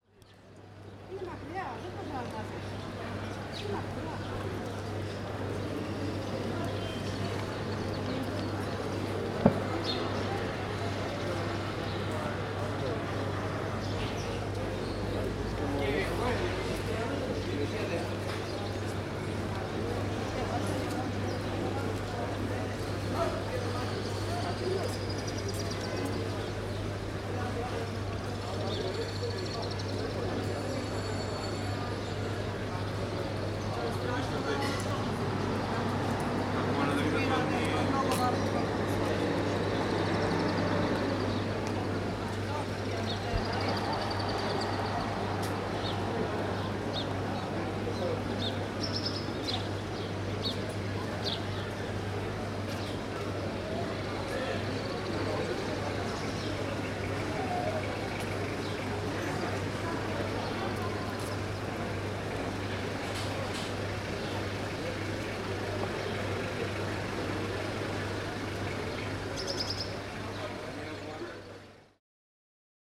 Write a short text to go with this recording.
Locals and tourists talking under the birds's cheep. The sound of the touristic buses in the background.